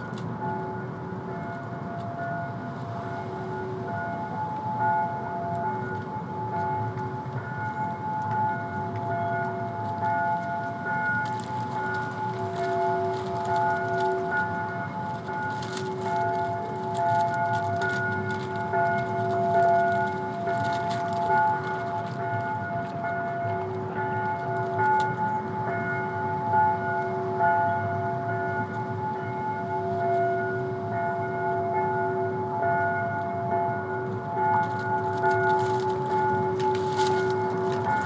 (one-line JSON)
{"title": "Wine Hill, Przemyśl, Poland - (873) Distant bells", "date": "2021-12-23 12:00:00", "description": "Recording made from a hill: distant bells play along with rustling leftovers from a construction site.\nAB stereo recording (29cm) made with Sennheiser MKH 8020 on Sound Devices MixPre-6 II.", "latitude": "49.80", "longitude": "22.77", "altitude": "262", "timezone": "Europe/Warsaw"}